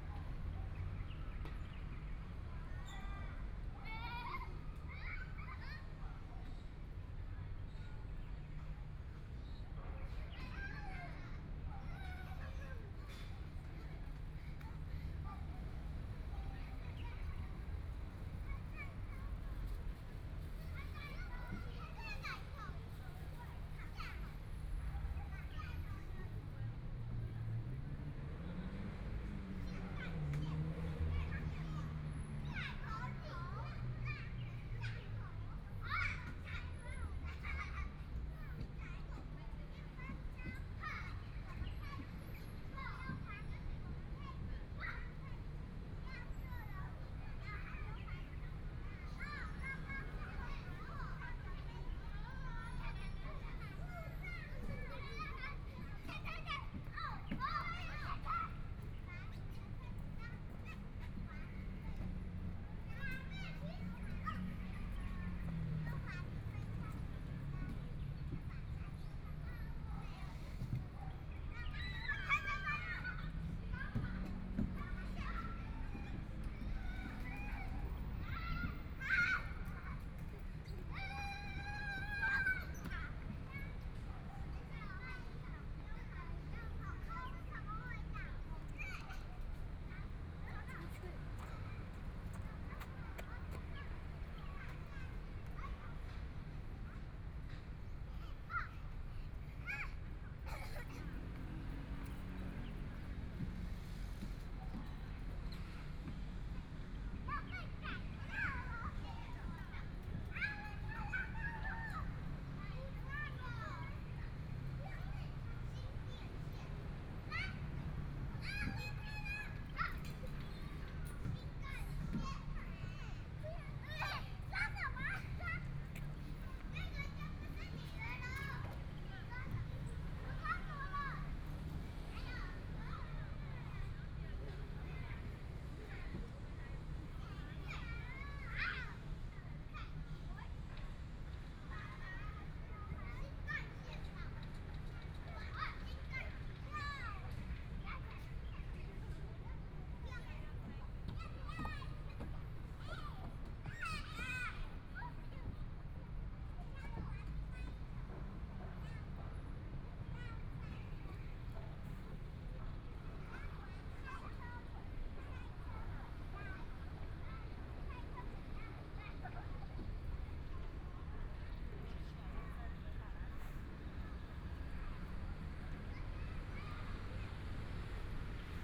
YiJiang Park, Taipei City - Kids game noise
Kids game noise, Sitting in the park, Traffic Sound, Birds sound
Please turn up the volume a little.
Binaural recordings, Zoom 4n+ Soundman OKM II
Taipei City, Taiwan, 17 February 2014